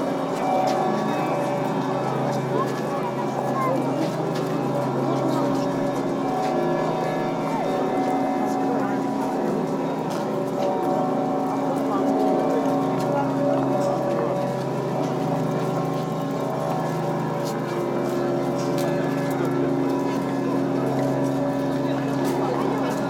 Dzerginsk, near Nikolo-Ugreshsky Monastery, St. Nicholas the Miracle-Worker day, Bellls chime